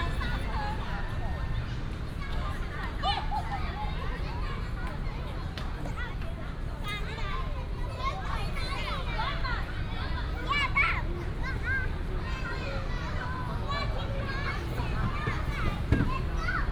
Jieshou Park, Banqiao Dist., New Taipei City - walking in the Park
Walking through the park, sound of the birds, traffic sound, Child
30 April 2017, 17:57